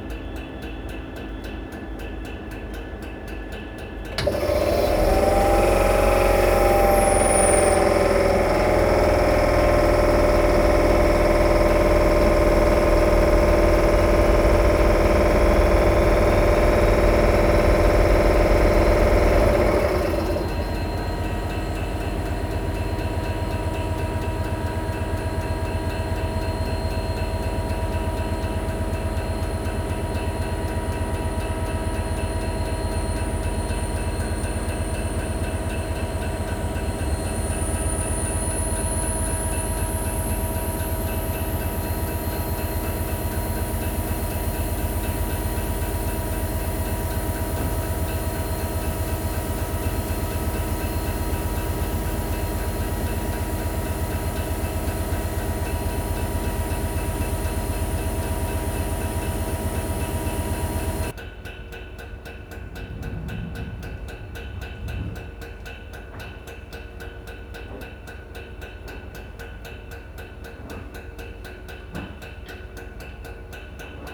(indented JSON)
{
  "title": "Ipswich Station, Burrell Rd, Ipswich, UK - Rhythmic train on Platform 4 in cold drizzle",
  "date": "2020-10-02 22:00:00",
  "description": "Waiting for my connection on a dark, cold, wet almost deserted station with a covid face mask that had already been on far too long. Beside me this train ticked away, skipping a beat every now and again, quite oblivious to the crazy world it existed in.\n2 recordings joined together.",
  "latitude": "52.05",
  "longitude": "1.14",
  "altitude": "8",
  "timezone": "Europe/London"
}